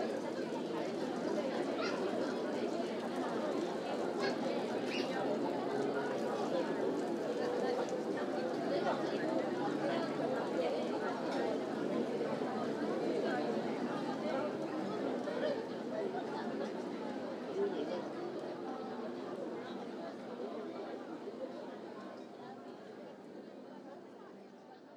대한민국 서울특별시 서초구 서초동 산130-9 - Seoul Arts Center, Outdoor Cafe
Seoul Arts Center, Outdoor Cafe
예술의전당, 야외 까페